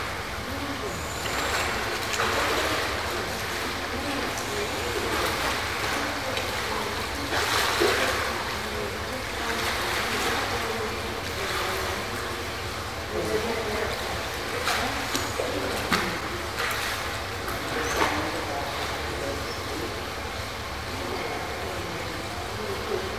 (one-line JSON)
{"title": "budapest, dandár gyógyfürdő, thermal bath", "date": "2010-02-12 18:40:00", "description": "inside a traditional hungarian thermal bath, some water splashes and conversations plus the hum from the heating\ninternational city scapes and social ambiences", "latitude": "47.48", "longitude": "19.07", "altitude": "105", "timezone": "Europe/Berlin"}